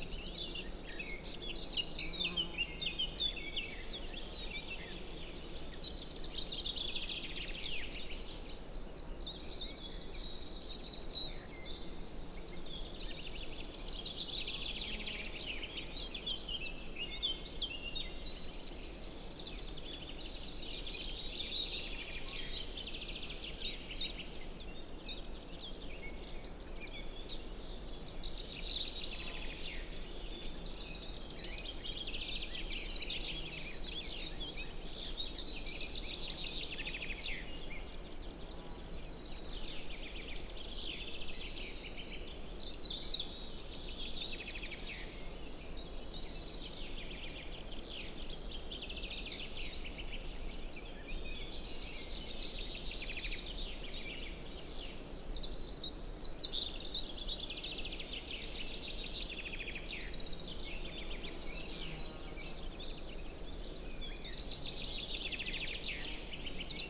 Unnamed Road, Slovakia - Mountain Meadow in High Tatras
Mountain meadow in summer. Surrounded by coniferous forest, altitude approx. 1400 meters.